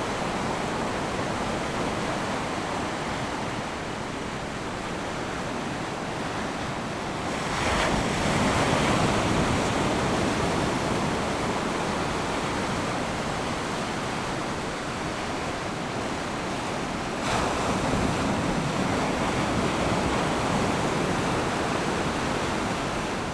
Chaikou Diving Area, Lüdao Township - Diving Area
Waves and tides, Diving Area
Zoom H6 XY+ Rode NT4